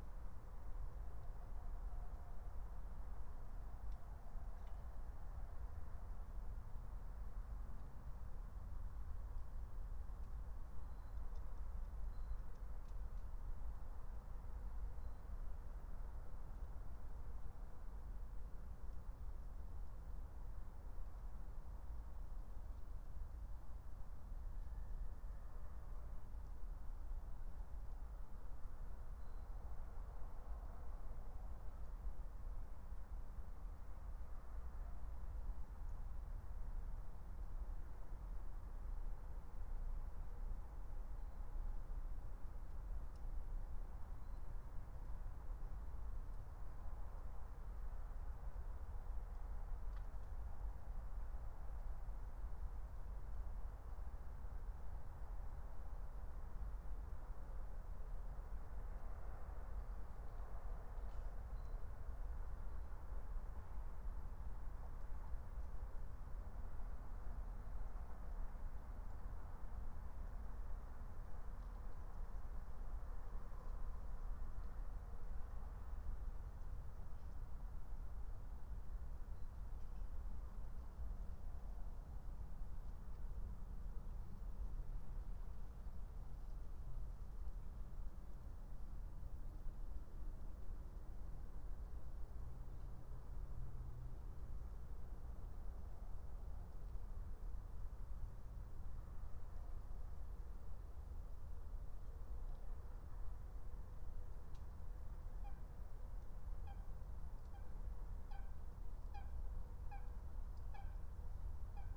18:50 Berlin, Alt-Friedrichsfelde, Dreiecksee - train junction, pond ambience
Deutschland, 20 March 2022, ~20:00